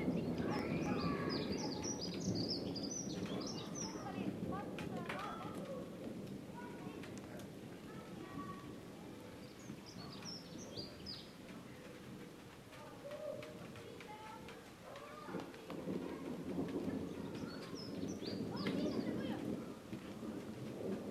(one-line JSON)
{"title": "Mortsel, Mortsel, België - garden", "date": "2015-06-05 18:00:00", "description": "this recording is made in my garden by 2 microphones\nthose microphones record each day automatic at 6, 12, 18 & 24", "latitude": "51.16", "longitude": "4.47", "altitude": "18", "timezone": "Europe/Brussels"}